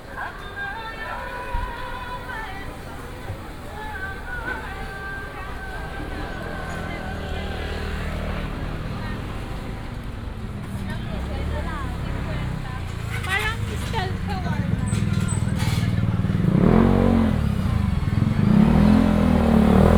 南機場夜市, Wanhua Dist. - Walking in the night market
Walking in the night market, traffic sound
Zhongzheng District, 中華路二段315巷5弄117號, 28 April 2017